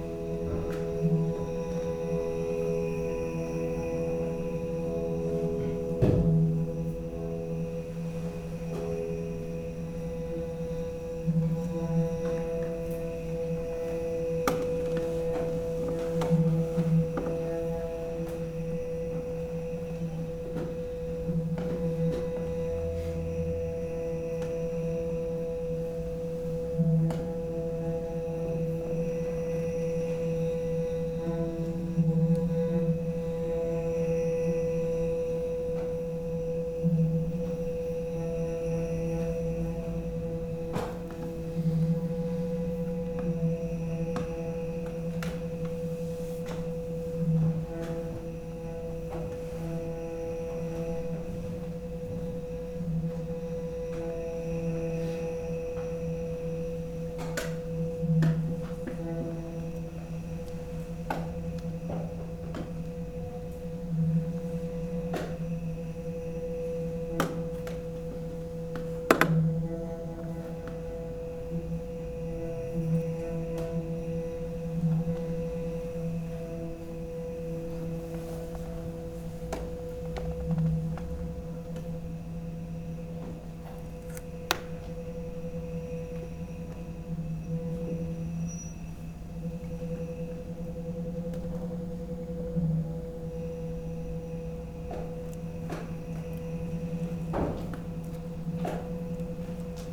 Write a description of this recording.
intense and silent chello performance by Charles Curtis. clicking and crackling chairs, tension.